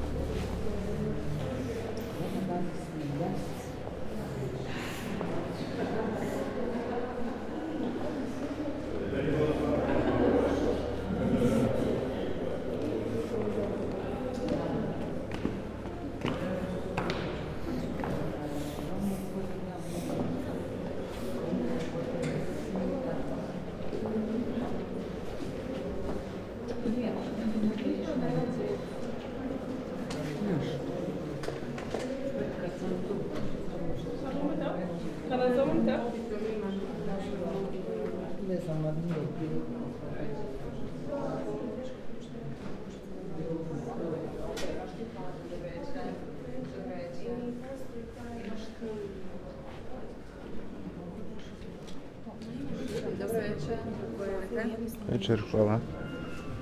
Rijeka, Croatia, Night Of Museums - Sveučilišna knjižnica Glagoljica u glazbi
Nights Of Museums 2017